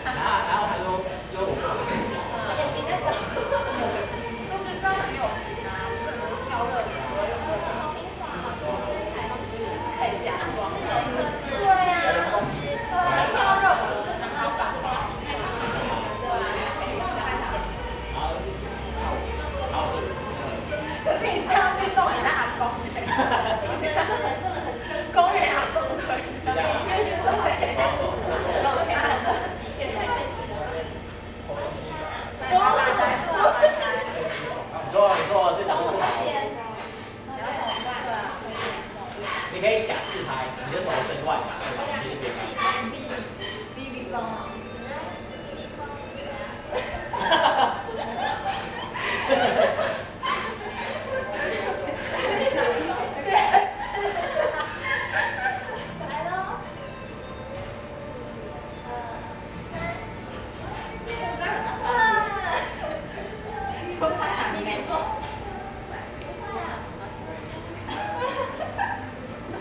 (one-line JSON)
{"title": "Kaohsiung, Taiwan - In the restaurant", "date": "2012-02-25 14:31:00", "description": "In the restaurant, The sound of a group of students talking, Sony Hi-MD MZ-RH1, Sony ECM-MS907", "latitude": "22.64", "longitude": "120.30", "altitude": "10", "timezone": "Asia/Taipei"}